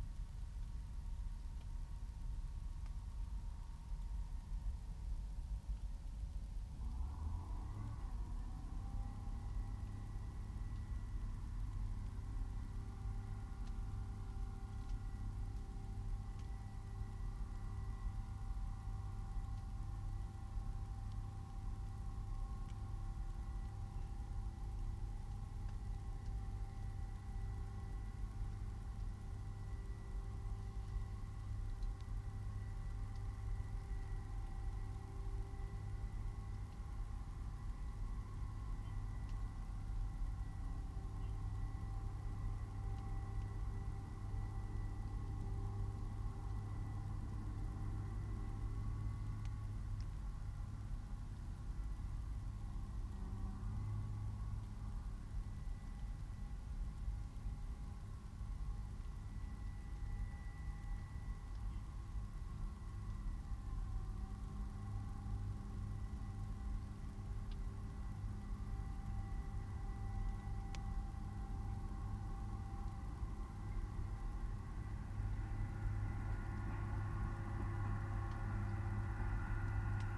Streaming from a hedgerow in large intensively farmed fields near Halesworth, UK - Railway work hum across the fields in the small hours
Things happen in the night that we know little of. Streaming sometimes reveals them. I like to leave it playing at a low level while I sleep. Maybe this effects my dreams, but sometimes I'm awoken; on this occasion by a fairly loud rather musical hum. It sounded fairly close even though I knew the mics were some distance out in the fields. I got up, opened a window and was surprised to hear the same hum just outside. Intrigued I got dressed and went to explore. It turned out be work on the railway, the droning machine engaged in some heavy repairs in the small hours while trains were stopped. It's sound pervaded the whole landscape, heard by me in town and by the mics in the hedge 1.7km away. As the work moved slowly down the track the town became quiet again, but it remained audible in the fields for considerably longer. A good lesson in acoustic geography and an illustration of the sonic lay lines propagated by air currents and channeled by contours through the surrounding land.
East of England, England, United Kingdom